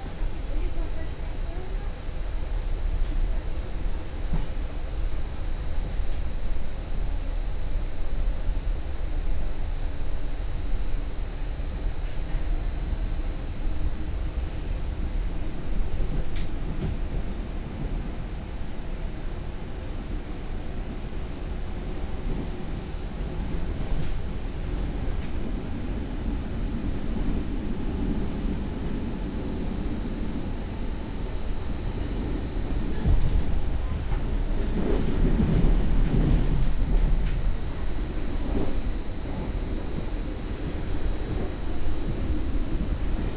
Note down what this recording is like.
"Second far soundwalk and soundtraintrip with break in the time of COVID19": Soundwalk, Chapter CXXXV of Ascolto il tuo cuore, città. I listen to your heart, city, Thursday, October 8th 2020, five months and twenty-seven days after the first soundwalk (March 10th) during the night of closure by the law of all the public places due to the epidemic of COVID19. This path is part of a train round trip to Cuneo: I have recorded only the walk from my home to Porta Nuova rail station and the train line to Lingotto Station. This on both outward and return, Round trip where the two audio files are joined in a single file separated by a silence of 7 seconds. first path: beginning at 6:55 a.m. end at 7:25 a.m., duration 29’35”, second path: beginning at 5:32 p.m. end al 5:57 p.m., duration 24’30”, Total duration of recording 00:54:13, As binaural recording is suggested headphones listening. Both paths are associated with synchronized GPS track recorded in the (kmz, kml, gpx) files downloadable here: